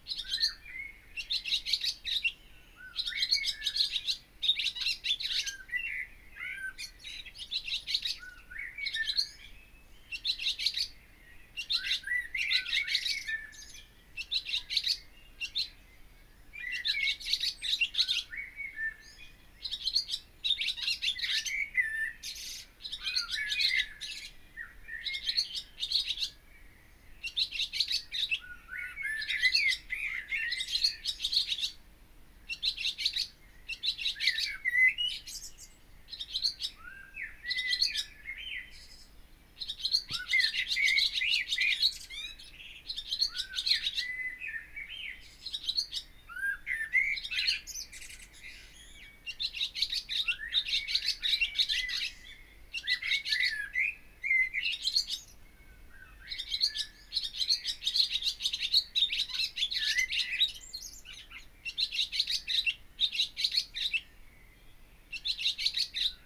Luttons, UK - on the guttering ... a swallow ...
On the guttering ... a swallow ... bird singing on the guttering above the back door ... nest is some 10m away ... recorded using Olympus LS 14 integral mics ... bird song from blackbird and song thrush ... some background noise ...
Malton, UK, 5 June 2017